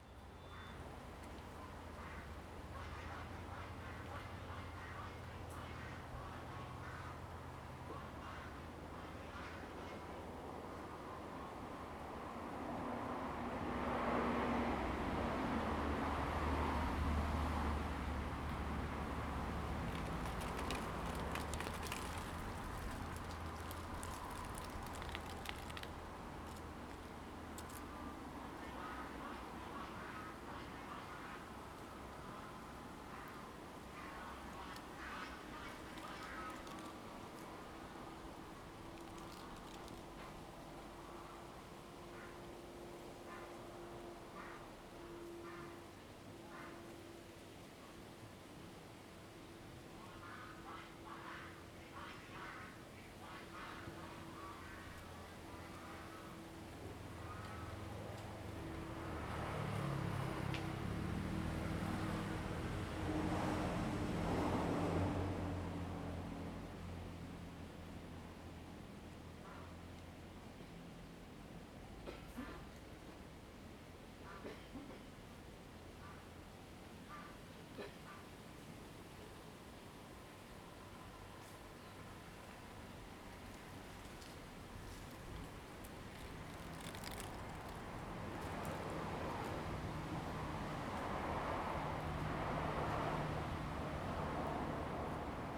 興昌村, Donghe Township - In front of a small temple
In front of a small temple, Traffic Sound, Ducks and geese, Very hot weather
Zoom H2n MS+ XY